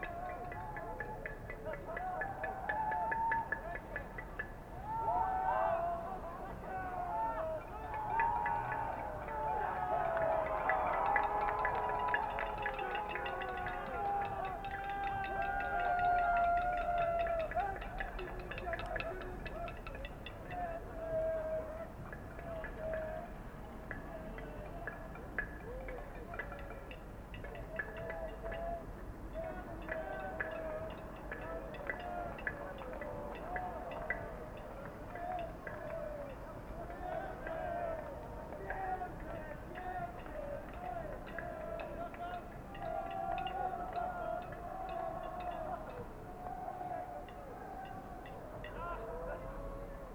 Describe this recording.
University campus. Local students tradition is that freshly graduated engineer or master of science must be thrown into the Kortowskie lake by his collegues. Also in winter...